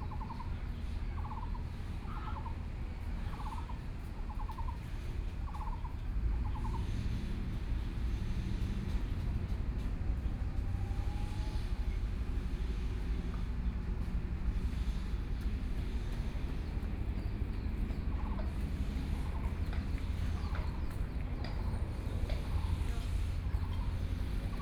Frogs sound
Binaural recordings
Sony PCM D100+ Soundman OKM II
Taipei City, Taiwan